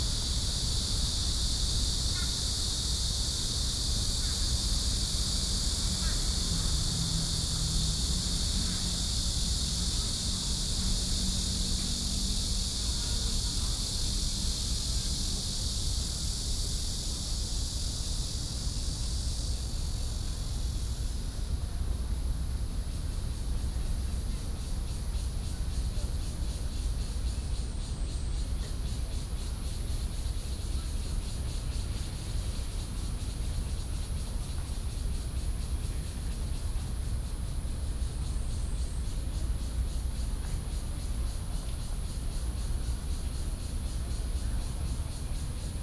Zhoushan Rd., Da'an Dist. - Cicadas cry
Cicadas cry, Traffic Sound, Visitor, In the university entrance